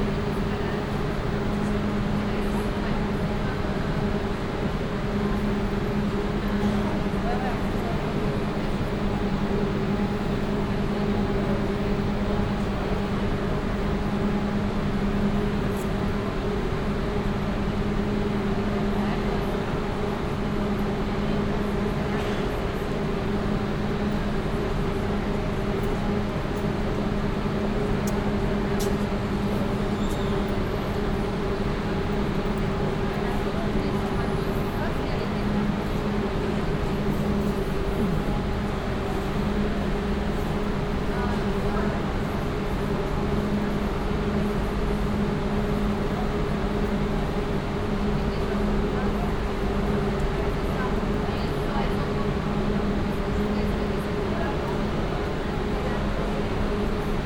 paris, rer station, noisy le grand mont d èst
in a subway station, waiting for train, the constant moor sound of a waiting train, train arrives
international cityscapes - social ambiences and topographic field recordings